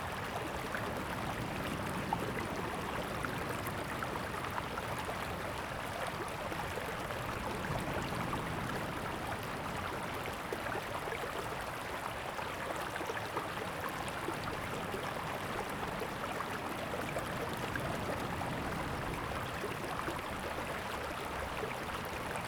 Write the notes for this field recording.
Streams close to the beach, The sound of water, Zoom H2n MS + XY